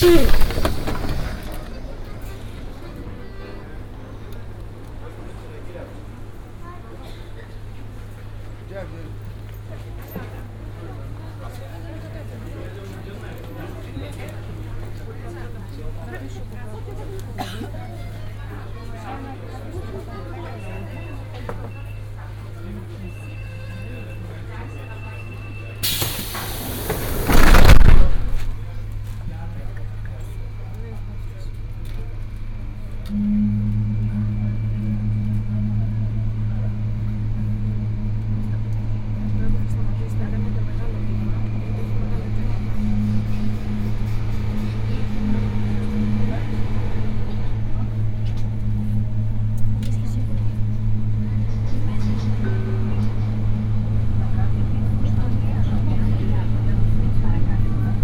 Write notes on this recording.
Binaural recording of a ride with M1 line from Petralona to Monastiraki. Recorded with Soundman OKM + Sony D100